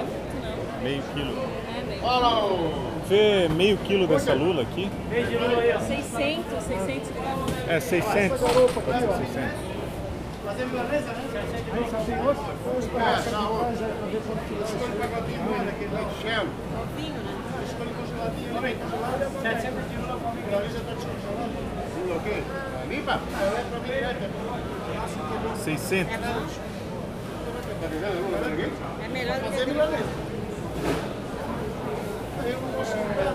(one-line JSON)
{"title": "Carnival reharsal at the public market in Florianópolis, Brazil", "description": "Listining to the carnival warm up while buying some fresh squids.", "latitude": "-27.60", "longitude": "-48.55", "altitude": "7", "timezone": "Europe/Berlin"}